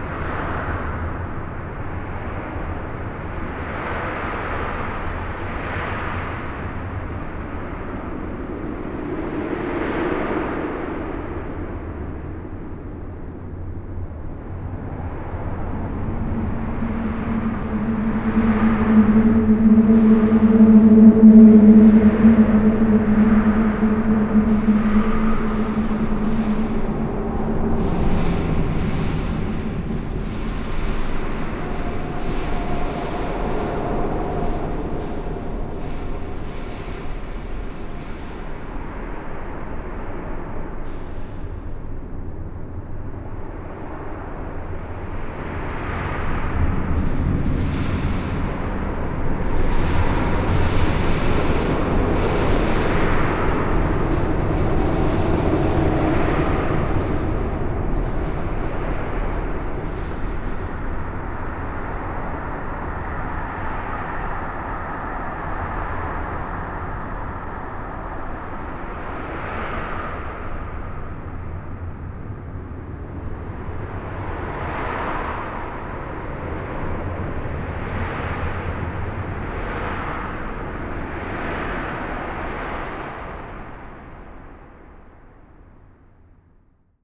Namur, Belgique - The viaduct
This viaduct is one of the more important road equipment in all Belgium. It's an enormous metallic viaduct on an highway crossing the Mass / Meuse river. All internal structure is hollowed.
This recording is made inside the box girder bridge. Trucks make everything terribly vibrate, and all duckboard is slowly jumping. It makes this parasite bling-bling sound, but that's normal, as it's a segmental bridge. Swings are huge !
Belgium, 19 April 2016